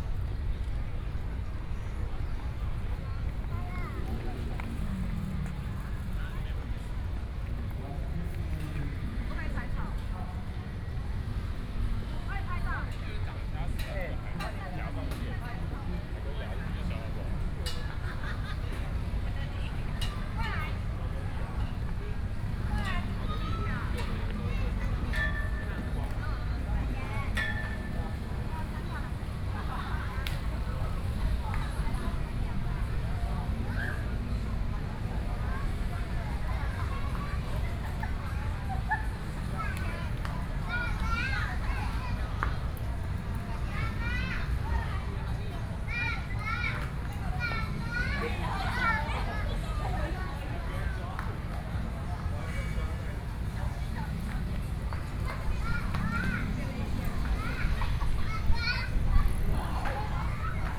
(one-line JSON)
{"title": "Zhongshan Park, 羅東鎮集祥里 - in the Park", "date": "2014-07-27 17:00:00", "description": "In the park, Children's play area, Traffic Sound", "latitude": "24.68", "longitude": "121.77", "altitude": "12", "timezone": "Asia/Taipei"}